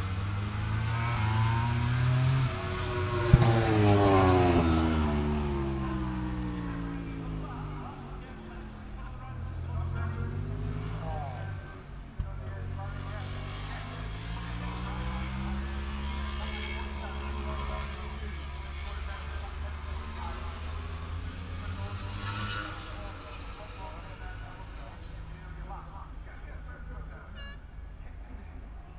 Lillingstone Dayrell with Luffield Abbey, UK - british motorcycle grand prix 2016 ... moto grand prix ...
moto grand prix free practice ... Vale ... Silverstone ... open lavalier mics clipped to clothes pegs fastened to sandwich box on collapsible chair ... umbrella keeping the rain off ... very wet ... associated sounds ... rain on umbrella ...
3 September 2016, 13:30